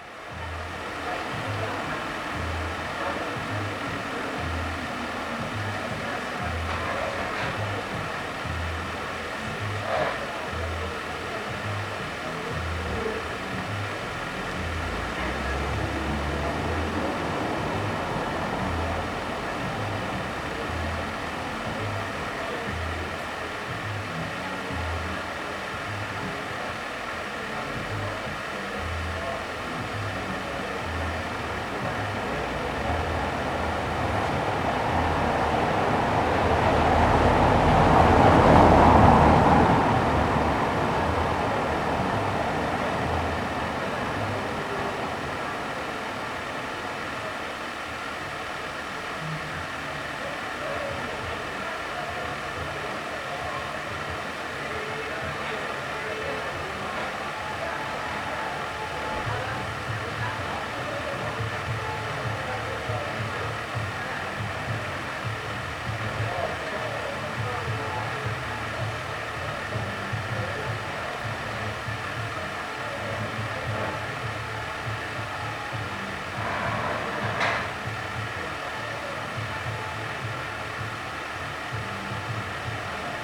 berlin, friedelstraße: vor kulturverein kinski - the city, the country & me: ventilation of kinski bar
ventilation of kinski bar
the city, the country & me: october 27, 2012